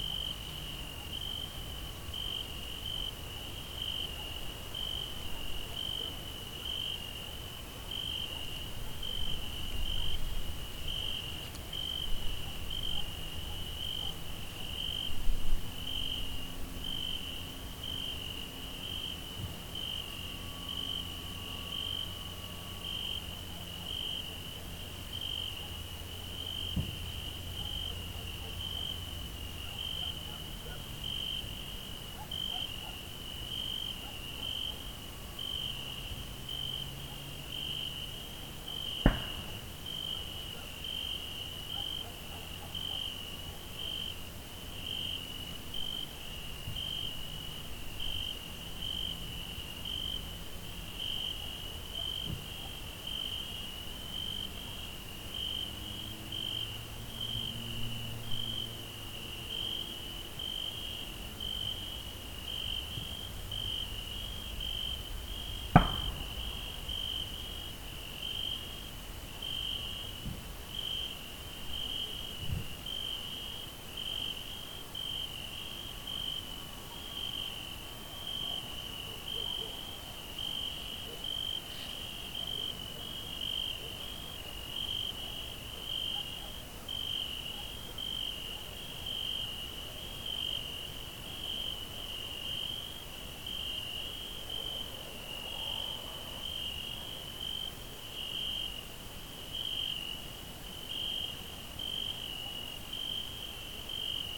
Unnamed Road, Aminteo, Greece - Night sounds in the field
Record by: Alexandros Hadjitimotheou
Περιφέρεια Δυτικής Μακεδονίας, Αποκεντρωμένη Διοίκηση Ηπείρου - Δυτικής Μακεδονίας, Ελλάς, 28 August 2021